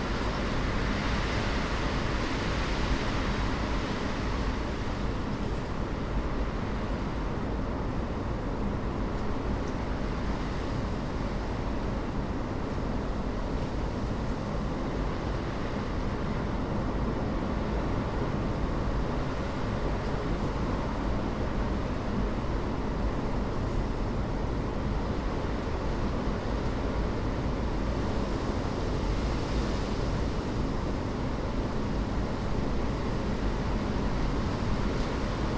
Knieper West, Stralsund, Deutschland - Hurricane Xaver over Stralsund
5 December 2013, 22:03